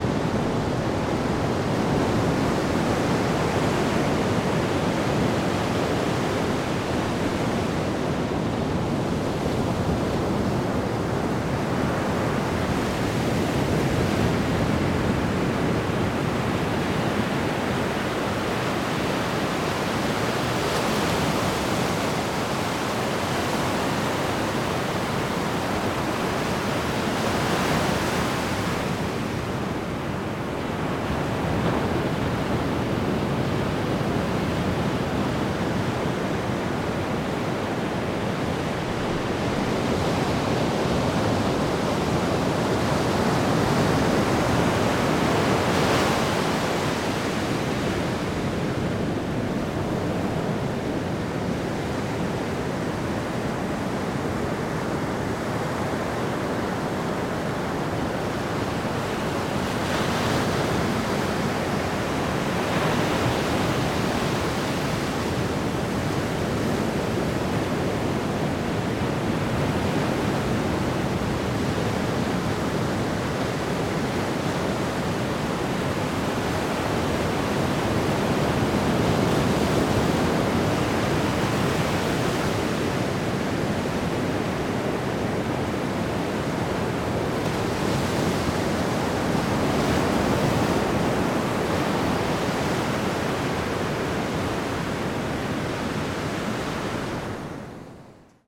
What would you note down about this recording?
This is a recording of Chaihuín beach. I used Sennheiser MS microphones (MKH8050 MKH30) and a Sound Devices 633.